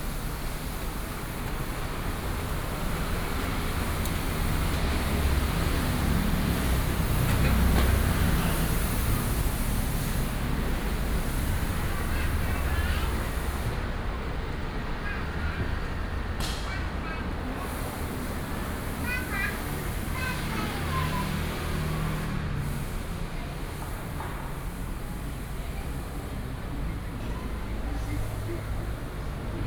Yangming St., Banqiao Dist., New Taipei City - walking in the Street
walking in the Street, Footsteps and Traffic Sound
Banqiao District, New Taipei City, Taiwan, 29 July